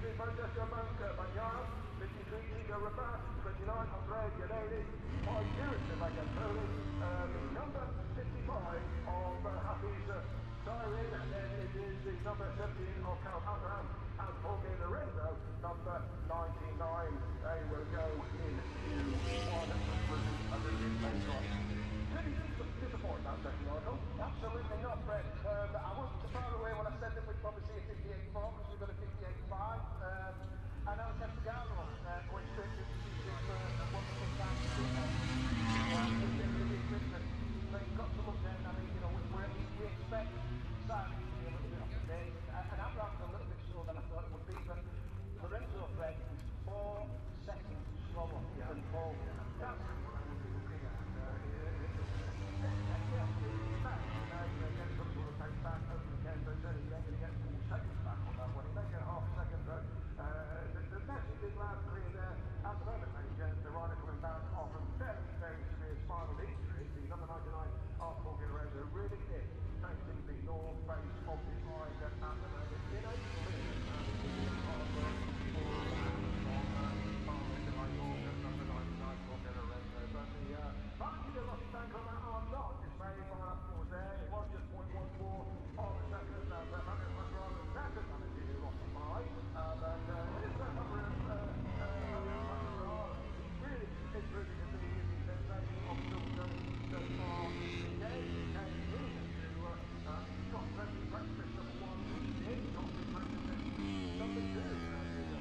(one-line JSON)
{"title": "Silverstone Circuit, Towcester, UK - british motorcycle grand prix 2019 ... moto grand prix ... fp3 contd ...", "date": "2019-08-24 10:35:00", "description": "british motorcycle grand prix 2019 ... moto grand prix ... free practice four contd ... maggotts ... lavaliers clipped to bag ... background noise ...", "latitude": "52.07", "longitude": "-1.01", "altitude": "156", "timezone": "Europe/London"}